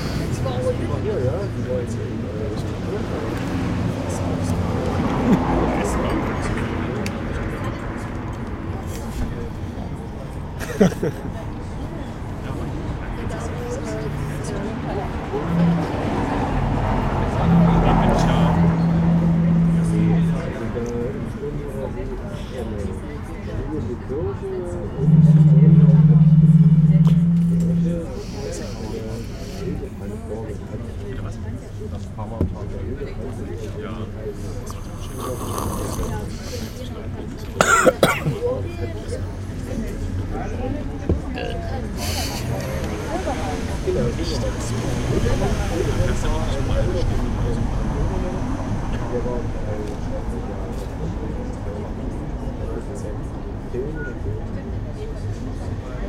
leipzig, im NochBesserLeben
im außencafé des NochBesserLeben in der merseburger straße ecke karl-heine-straße. straßenverkehr, stimmen der gäste. einige reagieren dann aufs mikrophon und machen absichtliche geräusche.
Leipzig, Deutschland, 31 August 2011, ~21:00